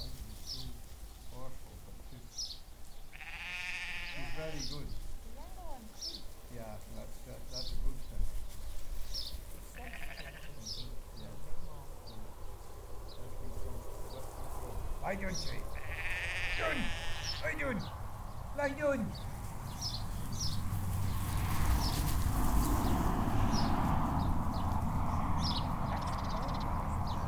Burland Croft Trail, Trondra, Shetland Islands, UK - The sheepdogs and the sheep
This is the sound of the enthusiastic sheepdogs herding the sheep at Burland Croft Trail. At different points in the recording you can hear the wind, the traffic on the road close by, and the low thunder of a lot of hooves moving in Unison across a field. You can also hear Tommy Isbister shouting commands to the dogs, and talking to me (some distance from the microphones) about the process of training sheep dogs. Although you can't hear what we're saying so clearly, I love the texture of chatting in this recording, because it reminds me of a lovely time, visiting with two amazing people. Tommy and Mary have been running the Burland Croft Trail since 1976, working and developing their crofts in a traditional way. Their main aim is to maintain native Shetland breeds of animals, poultry and crops, and to work with these animals and the environment in the tried-and-tested way that have sustained countless generations of Shetlanders in the past.